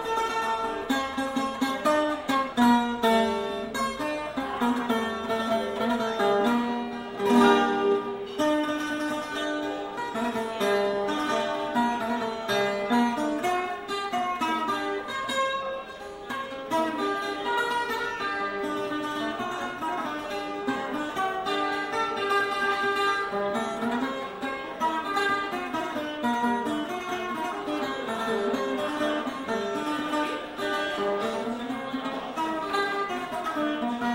{"title": "2Morais, Macedo de Cavaleiros, PT.Old man (Jaime Martinez) play portuguese guitar (A.Mainenti)", "latitude": "41.49", "longitude": "-6.77", "altitude": "616", "timezone": "Europe/Berlin"}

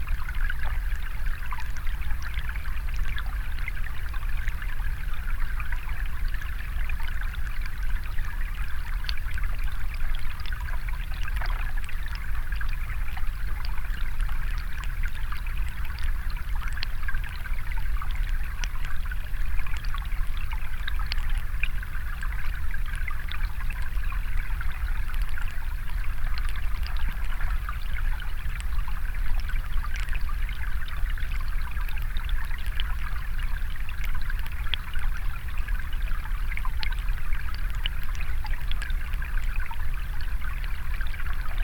{"title": "Vyzuonos, Lithuania, water springs", "date": "2020-10-04 17:40:00", "description": "there are several water springs in the valley, water just emerging from muddy soil. hydrophone sunken in the mud.", "latitude": "55.61", "longitude": "25.46", "altitude": "91", "timezone": "Europe/Vilnius"}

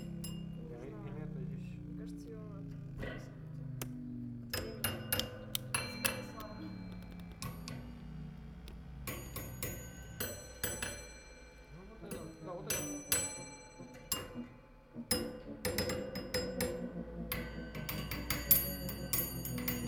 {"title": "Ligovsky Ave, St Petersburg, Russia - Sound Museum - part 2 (Theremin)", "date": "2016-07-25 17:30:00", "description": "Binaural recordings. I suggest to listen with headphones and to turn up the volume.\nIt's the Museum of Sound, placed in St. Peterburg. Here, me playing the Thereming by Moog!\nRecordings made with a Tascam DR-05 / by Lorenzo Minneci", "latitude": "59.93", "longitude": "30.36", "altitude": "23", "timezone": "Europe/Moscow"}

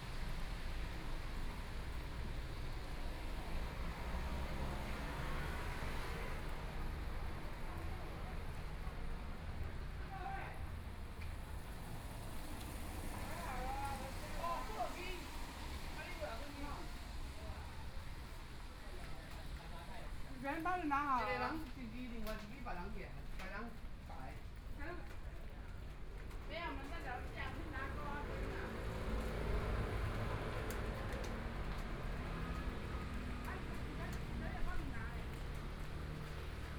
{"title": "Xinxing Rd., Taipei City - soundwalk", "date": "2014-02-14 18:44:00", "description": "walking on the road, Traffic Sound, Rainy days, Clammy cloudy, Binaural recordings, Zoom H4n+ Soundman OKM II", "latitude": "25.14", "longitude": "121.49", "timezone": "Asia/Taipei"}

